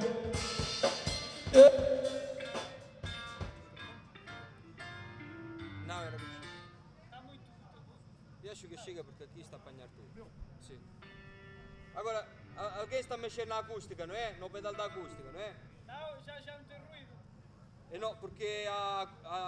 soundcheck in Trás-os-Montes
Portugal, 27 August 2010